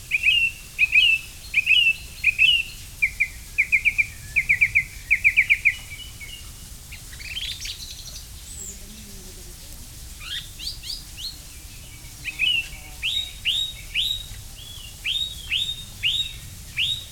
Pyrimont, France - Living forest
Living sound of the forest, with a lot of blackbirds talking between them, a few planes and a few sounds from the nearby village. The forest in this place is an inextricable coppice !
Chanay, France, 12 June 2017